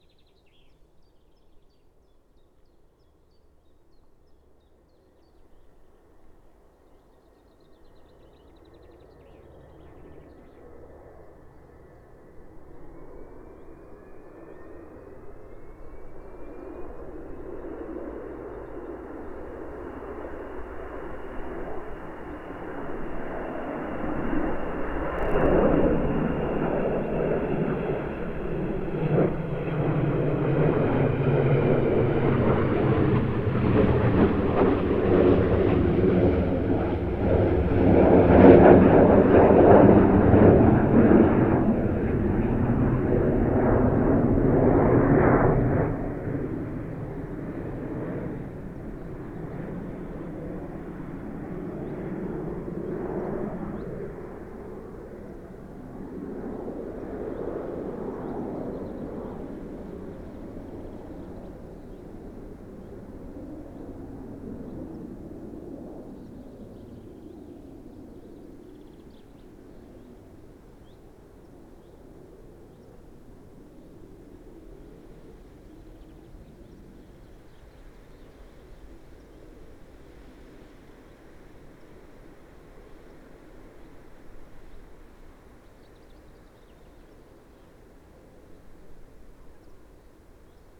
Heslerton, UK - Avro Vulcan
One of the last flights of the Avro Vulcan ... flew over head ... heading up the North East coast ... parabolic reflector ...